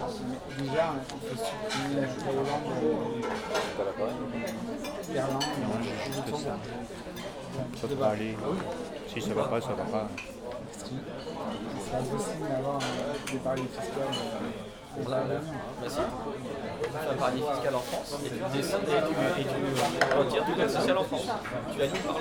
Paris, France - Paris restaurant
Into a Paris restaurant, hubbub of the clients and two people talking about the actual french political problems.
2 January 2019, 13:35